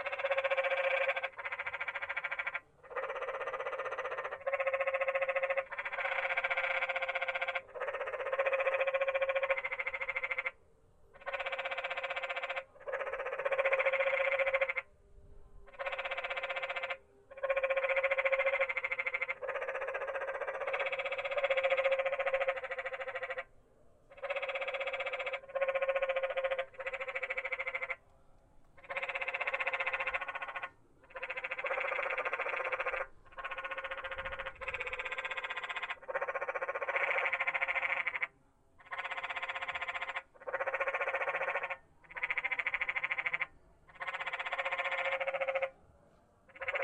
Arcosanti, AZ - Arcosanti's Frogs
This recording was made at Arcosanti, a project by Italian architect Paolo Soleri.
The frogs were inside a cement structure that I initially mistook for a trash can. Later I came to realize that the structure was housing the frogs and was itself an angular futurist rendition of a frog.
From Wikipedia: Arcosanti is an experimental town and molten bronze bell casting community in Yavapai County, central Arizona, 70 mi north of Phoenix, at an elevation of 3,732 feet.